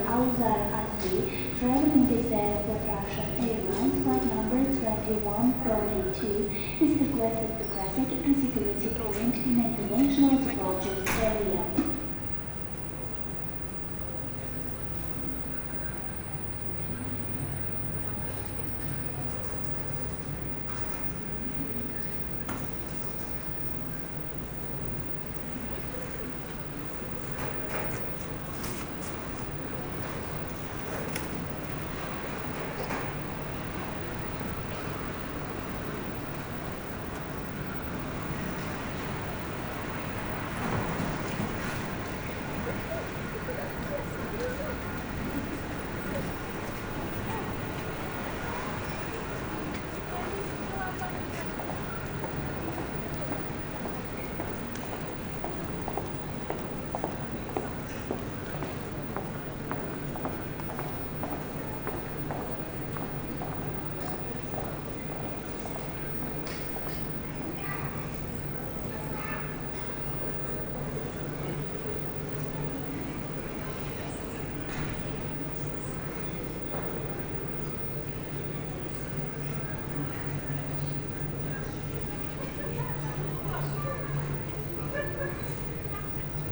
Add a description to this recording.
Soundscape of the Moscow Aeroflot airport Sheremetyevo. This field recording lets you travel freely in the airport, listening to the special sounds you can hear in this kind of place. Recorded without interruption on September 15, 2018, 14h15 to 15h15. Walking from the A terminal to the F terminal.